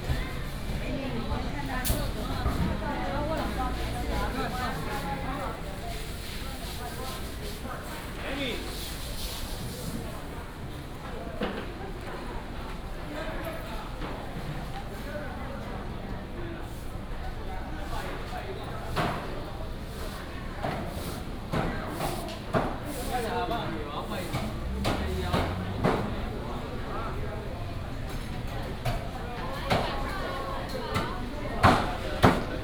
中平黃昏市場, Taoyuan Dist. - dusk market
Walking in the traditional dusk market, Traffic sound